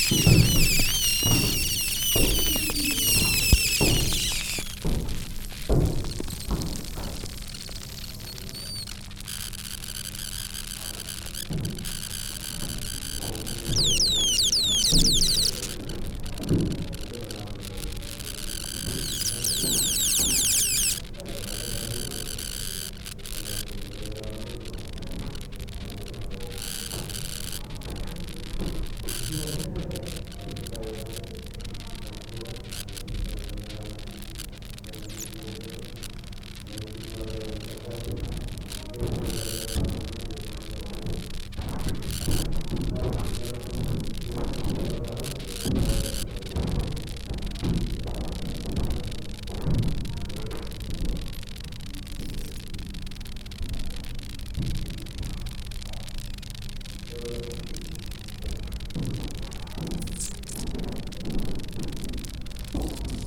spontaneous fermentation at cantillon brewery, brussels
a close-up recording of spontaneous fermentation, as the cantillon brewerys lambic ferments in a large oak cask, and gas and foam escapes around the wooden plug in the top.
Anderlecht, Belgium, 14 January 2012, 13:46